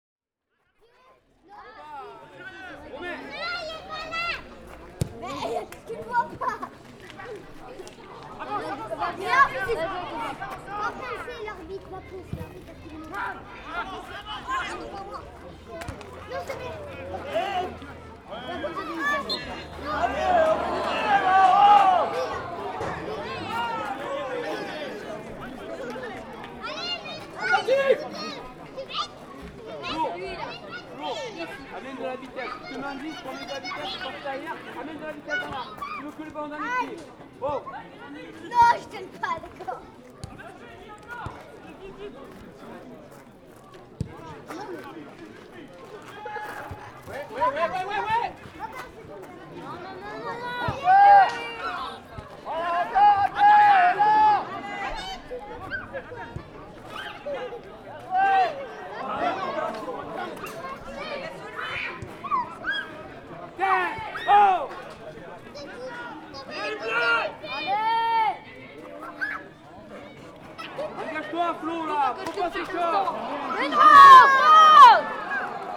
Rue Jean Macé, Bergerac, France - Listening to Bergerac FC v Andrezieux from behind the dugout
Listening to Bergerac FC v Andrezieux in the CFA National 2 from the gravel path behind the dugout. I made this recording with my Tascam DR-40.
18 August 2018